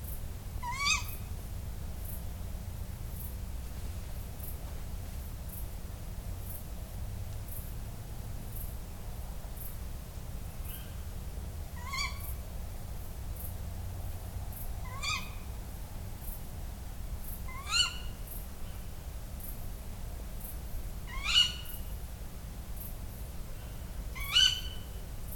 Full moon rise on this quiet english town. Its midsummer and quite hot. You can here the owls echoing through the landscape.